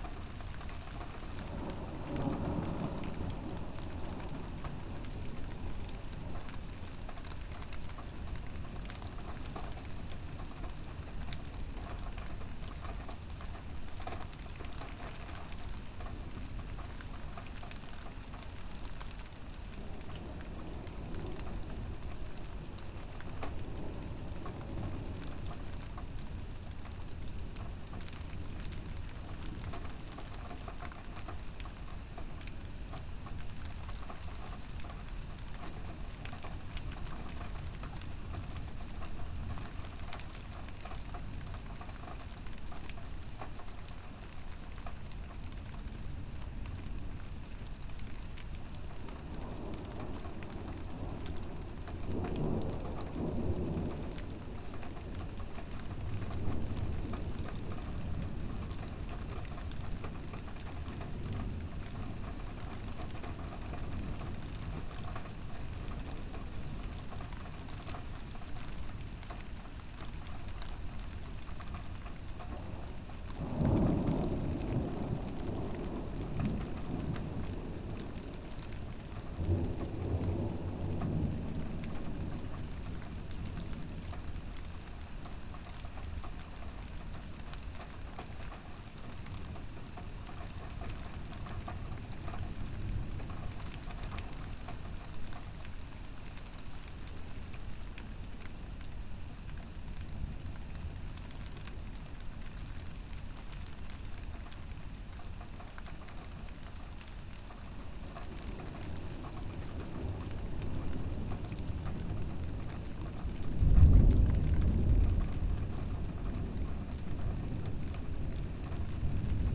A terrible thunderstorm ravage the Brabant-Wallon district. 30 Liters fall down in 10 minutes. In the nearby city of Court-St-Etienne, 300 houses were devastated. On 14:42, the thunderbolt is very near.
Mont-Saint-Guibert, Belgium, 23 June, 21:40